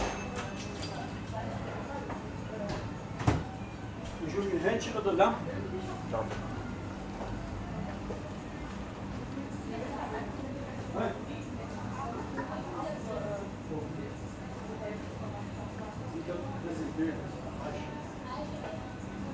recorded june 20th, 2008.
project: "hasenbrot - a private sound diary"
Cologne, Germany